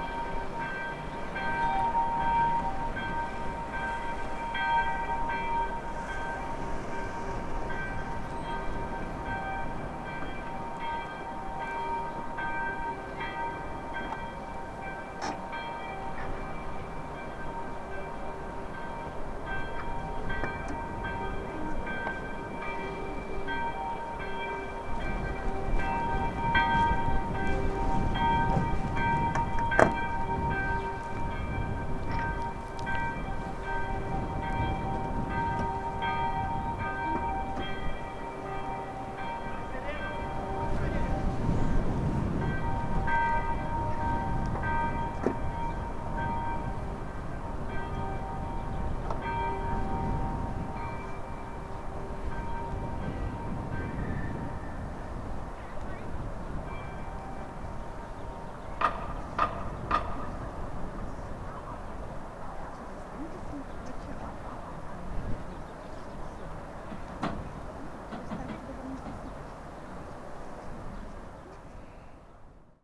Lithuania, Utena, sirens and bells
testing of warning system and church bells sounding at the same time
25 April, 11:59